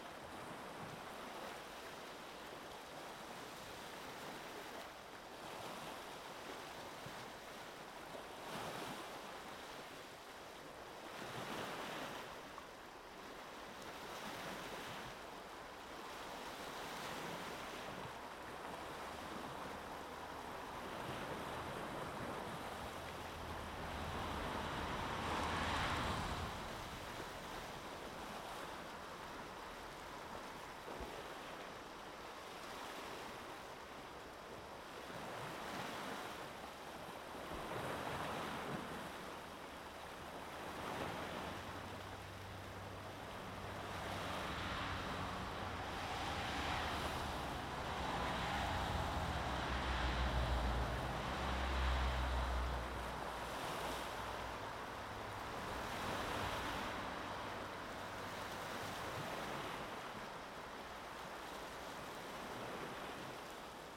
AKG C414-XLS Blumlein 1.4m array height
Croatia, July 2012